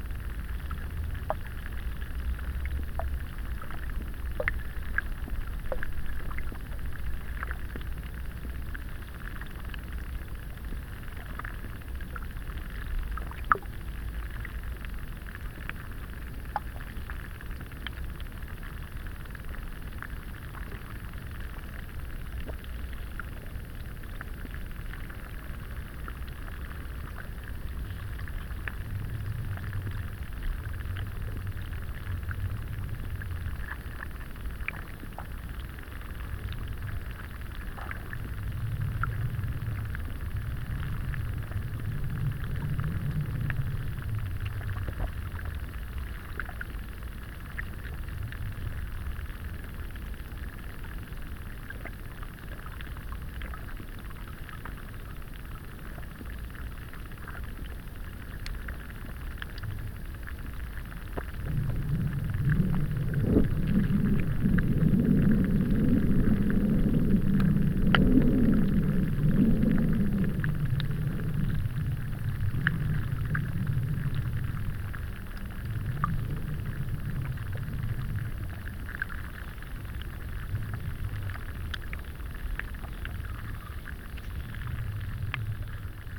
Kaliningrad, Russia, underwater
another point od underwater sound exploration